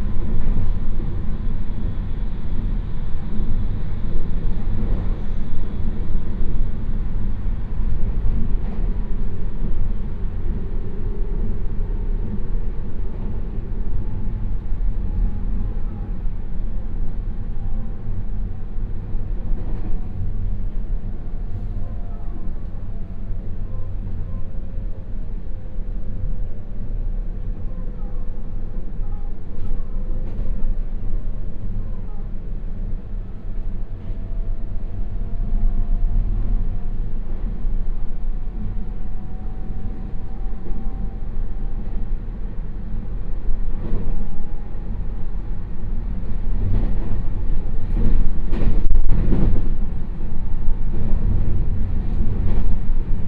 烏日區榮泉里, Taichung City - In the train compartment
In the train compartment, from Chenggong Station to Changhua Station